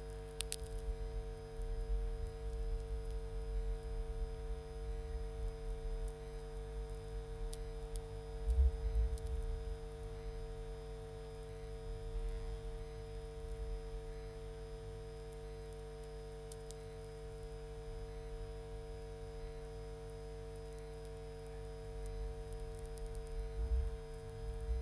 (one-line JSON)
{"title": "Utena, Lithuania, underbridge study in two parts", "date": "2018-07-19 19:40:00", "description": "investigating my little town's bridges. the recording is two aural parts mix. the first part - audible evening soundscape under the bridge. the second part - probably fictitious aural scape received from normally unaudible sources with the help of contact microphones anf electromagnetic antenna", "latitude": "55.50", "longitude": "25.60", "altitude": "106", "timezone": "Europe/Vilnius"}